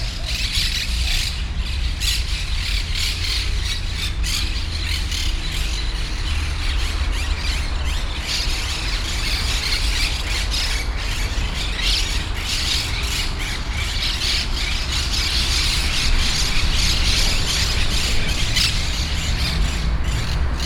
Parakeets - Perruches in the Forest Park, Brussels
5 October, ~18:00